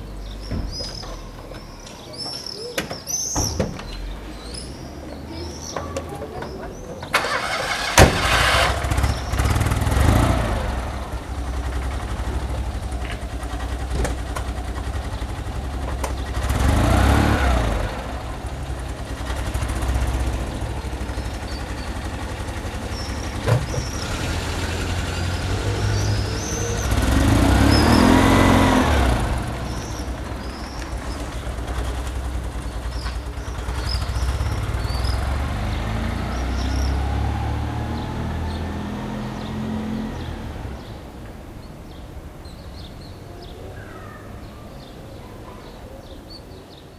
Nevers, rue des Ardilliers, the bells after the Mass.
Minidisc recording from 1999.